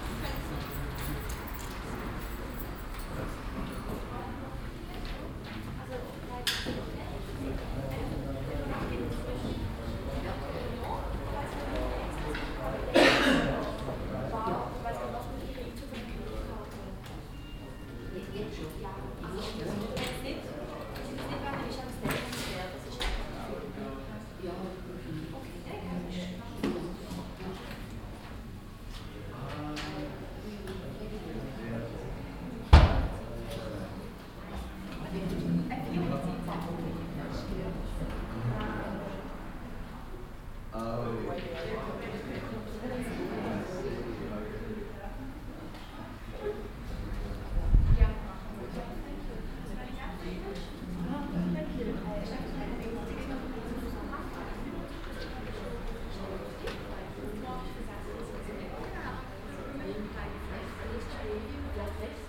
basel, schönaustrasse, hotel, foyer
hotel foyer morgens in der auscheckzeit, internationales publikum, schritte, rollkoffer, mobiltelephone, computerpiepsen
soundmap international
social ambiences/ listen to the people - in & outdoor nearfield recordings